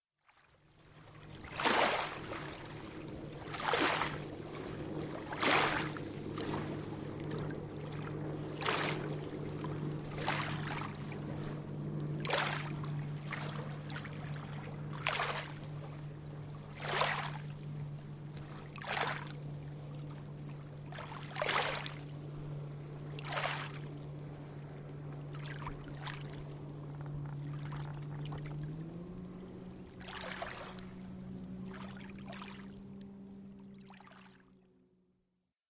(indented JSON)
{
  "title": "Helsinki, Finland - Rowing to Vartiosaari",
  "date": "2012-09-07 14:09:00",
  "description": "Rowing to Vartiosaari. Vartiosaari is an island only accessible by boat. Island has some residents, who live there all year round, summer residents and couple of artist studios.\nRecorded by the 1st mate: Milos Zahradka. Rowing by the captain: Erno-Erik Raitanen. Recorded with Zoom H2 on a nice and warm sunny autumn day.",
  "latitude": "60.18",
  "longitude": "25.07",
  "altitude": "1",
  "timezone": "Europe/Helsinki"
}